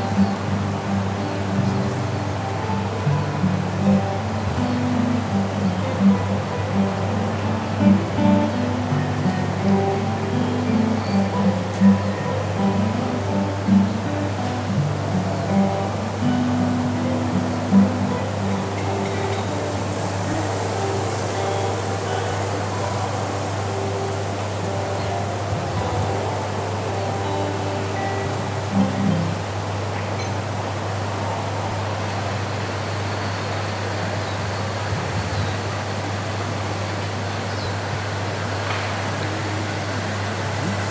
Nida, Lithuania - Near a hotel
Recordist: Anita Černá
Description: Recorded near a hotel. Guitar playing far away, insects and wind noises. Recorded with ZOOM H2N Handy Recorder.
July 2016